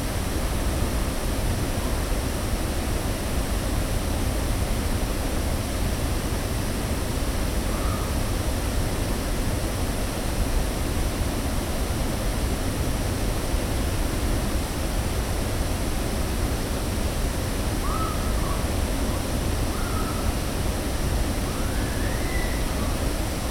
Rjecina, Pasac, waterfall
Waterfall @ cascades of Rjecina river.